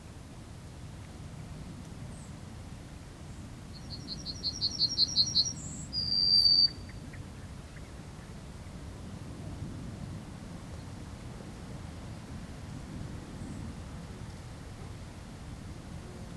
Ottignies-Louvain-la-Neuve, Belgique - Yellowhammer
Walking threw the wheat fields, the song of the Yellowhammer. In our area, it's the very symbolic bird song of an hot summer in beautiful fields.